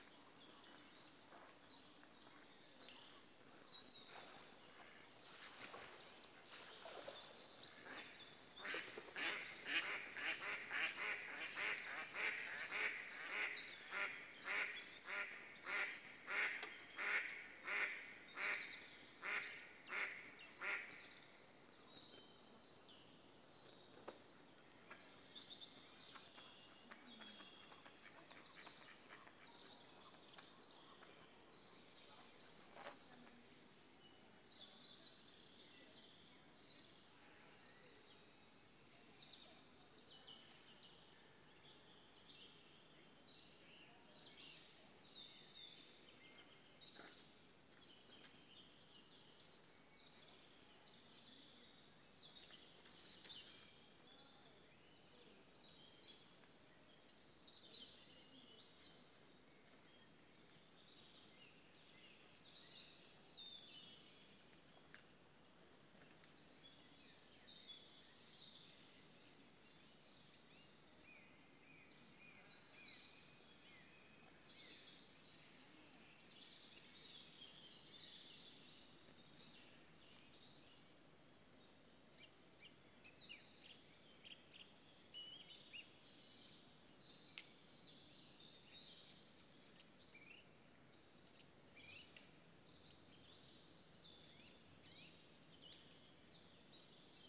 Tankwa Town, Northern Cape, South Africa - The Union Burn
An ambisonic-binaural downmix from a Fire Ranger's perspective; the Burning of the art piece The Union, at Afrikaburn 2019